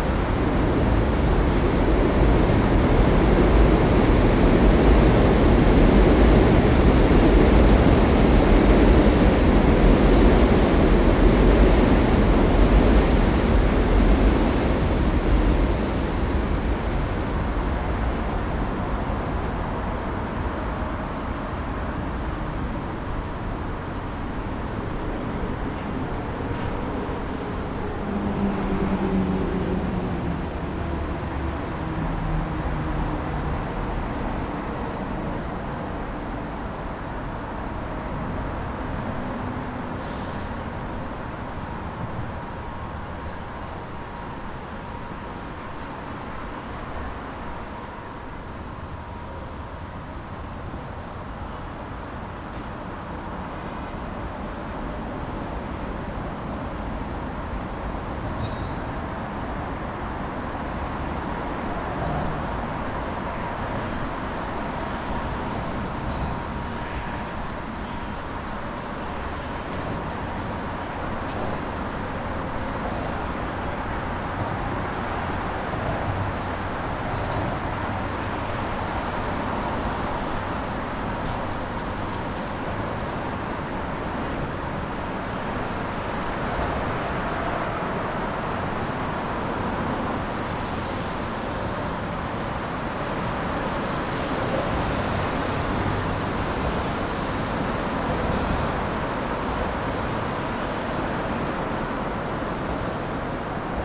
Sounds of traffic on the Williamsburg Bridge.
Zoom h6